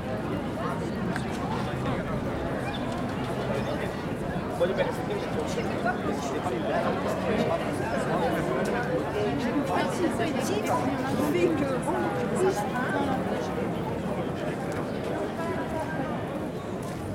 Outdoor maket of Saint Aubin
Dan Rob captation : 18 04 2021